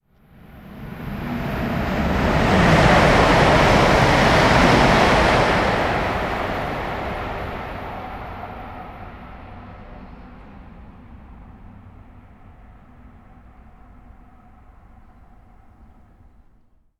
Limburg Süd, ICE Bahnhof / station - ICE3 Durchfahrt, / ICE3 high speed train passing
andere Richtung / other direction
(Zoom H2, builtin mics, 120°)